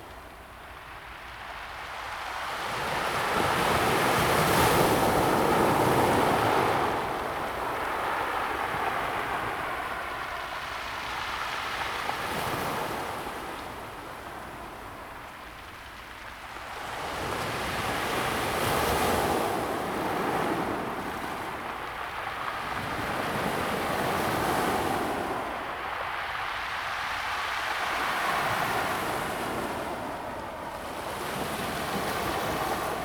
Qixingtan Beach, Xincheng Township - the waves
sound of the waves
Zoom H2n MS+XY +Sptial Audio
Hualien County, Taiwan, 19 July 2016